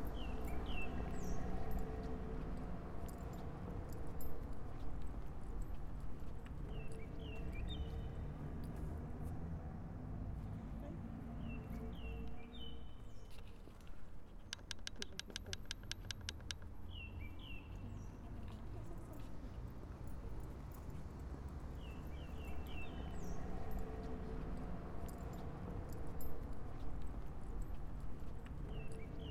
Plaza Isabel la Catolica
por Fernando Hidalgo